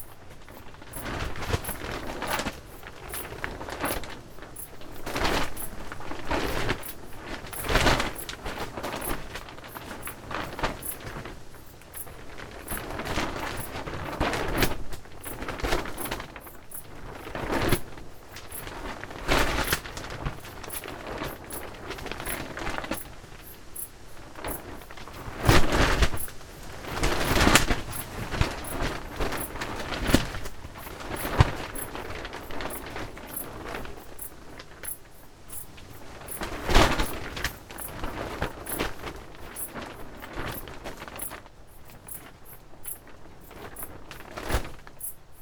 In a plastic greenhouse, Taavi Tulev
30 September 2009, 22:38